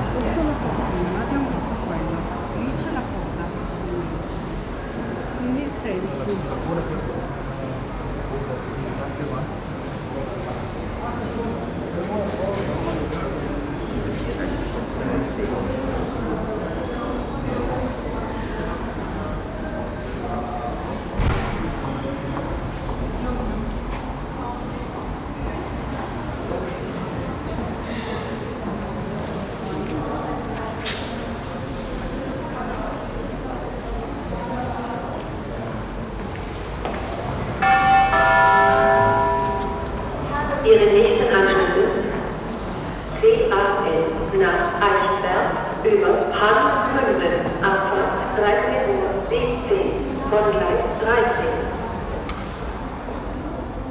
{"title": "Mitte, Kassel, Deutschland - Kassel, main station, hall and announcement", "date": "2012-09-12 13:15:00", "description": "Inside the rear building of the main station during the documenta 13.\nThe sound of steps and international visitors walking by. Finally an announcement through crackling speakers.\nsoundmap d - social ambiences and topographic field recordings", "latitude": "51.32", "longitude": "9.49", "altitude": "185", "timezone": "Europe/Berlin"}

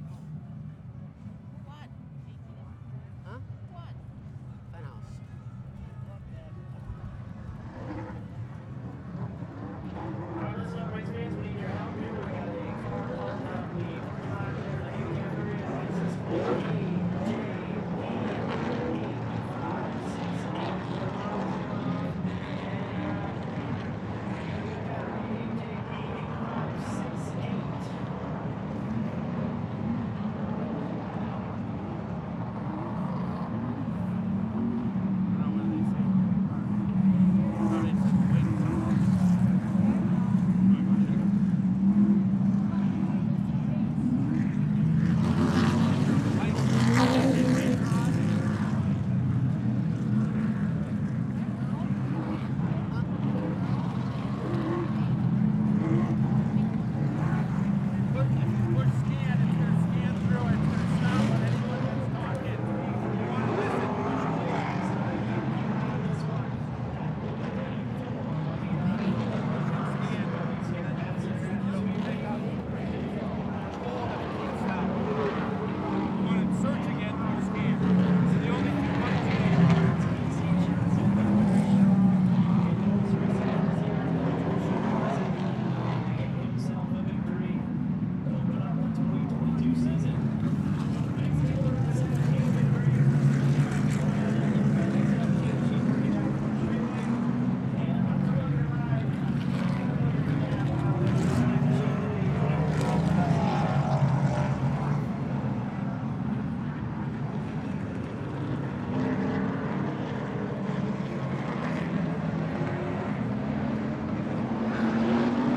{"title": "Madison International Speedway - ARCA Midwest Tour Practice", "date": "2022-05-01 11:26:00", "description": "Practice for the Joe Shear Classic an ARCA Midwest Tour Super Late Model Race at Madison International Speedway. There were 29 cars which came out for practice in groups of 5-10", "latitude": "42.91", "longitude": "-89.33", "altitude": "286", "timezone": "America/Chicago"}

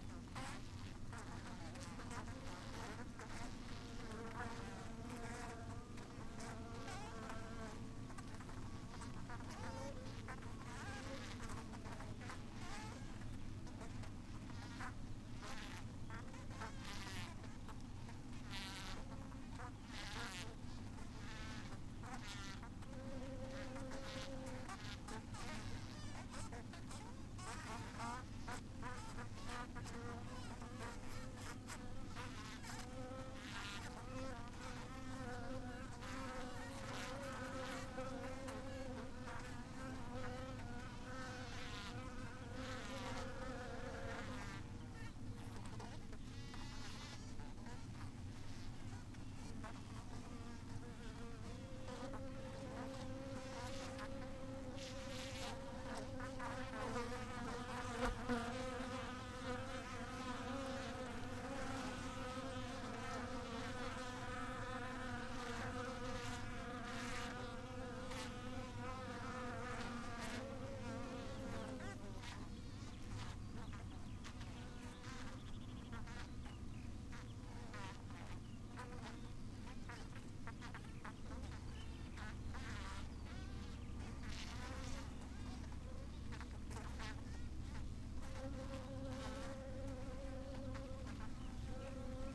{"title": "Green Ln, Malton, UK - bee swarm ...", "date": "2020-07-12 06:22:00", "description": "bee swarm ... SASS to Zoom F6 ... the bees have swarmed on the outside of one the hives ...", "latitude": "54.13", "longitude": "-0.56", "altitude": "105", "timezone": "Europe/London"}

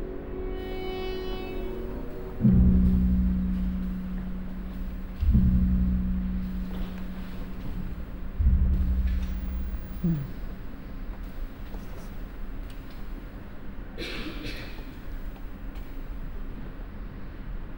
{"title": "Stadt-Mitte, Düsseldorf, Deutschland - Düsseldorf, opera house, performance", "date": "2013-03-08 21:10:00", "description": "In the auditorium of the \"Deutsche Oper am Rhein\", during the premiere performance of SehnSuchtMEER by Helmut Oehring. The sound of the orchestra and the voice of David Moss accompanied by the sounds of the audience and the older chairs.\nsoundmap nrw - topographic field recordings, social ambiences and art places", "latitude": "51.23", "longitude": "6.78", "altitude": "42", "timezone": "Europe/Berlin"}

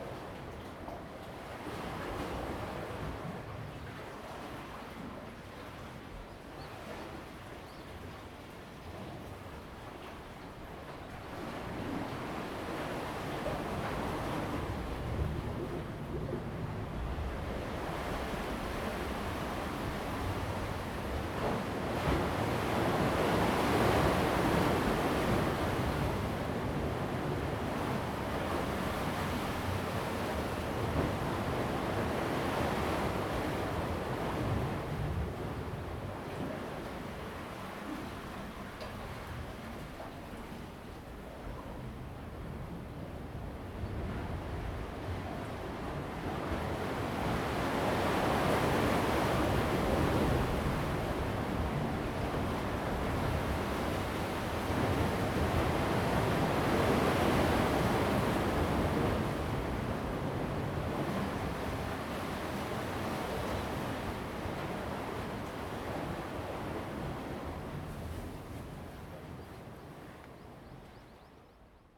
{"title": "Koto island, Taitung County - Clipping block", "date": "2014-10-29 15:53:00", "description": "On the bank, Tide and Wave\nZoom H2n MS+XY", "latitude": "22.00", "longitude": "121.58", "altitude": "7", "timezone": "Asia/Taipei"}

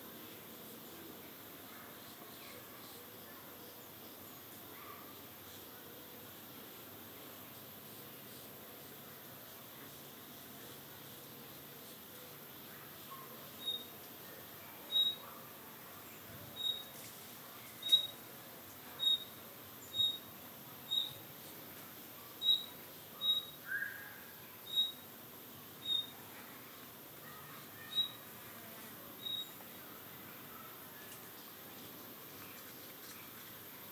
{"title": "Šumarice, Kragujevac, Serbia - Šumarice summer forest atmosphere", "date": "2021-07-16 11:00:00", "description": "This is a forest atmosphere recorded in July in Šumarice, Kragujevac, Serbia. You can hear insects and birds. It was recorded with a pair of FEL Clippy XLR EM272 microphones and Sound Devices MixPre-6 II recorder.", "latitude": "44.01", "longitude": "20.89", "altitude": "232", "timezone": "Europe/Belgrade"}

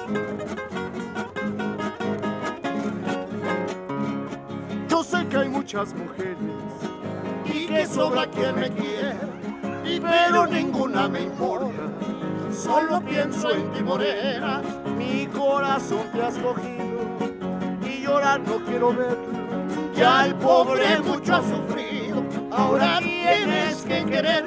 {"title": "Portal Guerrero, Centro, San Andrés Cholula, Pue., Mexique - Cholula El Zocalo - José, Luis & Rey", "date": "2021-11-12 12:10:00", "description": "Cholula\nEl Zocalo.\nQuelques minutes avec José, Luis & Rey - Musiciens", "latitude": "19.06", "longitude": "-98.31", "altitude": "2153", "timezone": "America/Mexico_City"}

